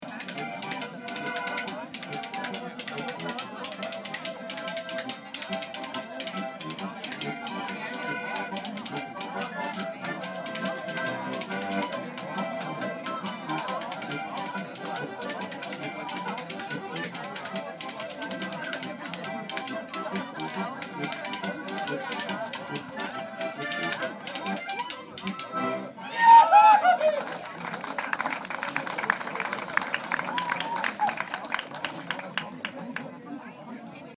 St. artin Hütte, Bayern
Zünftige Musik an heimeligen Platz.